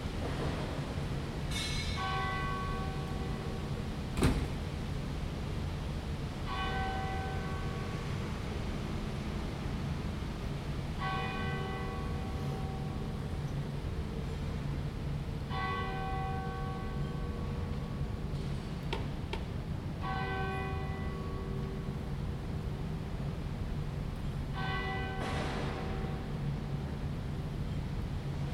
Westminster Abbey. - Dean's Yard, Westminster Abbey - Morning Prayer Bell.
Dean's Yard: an oasis of peace and calm amidst the noise of central London. The bell is calling worshipers to morning prayer in Westminster Abbey.
London, UK, 22 June 2017, ~07:00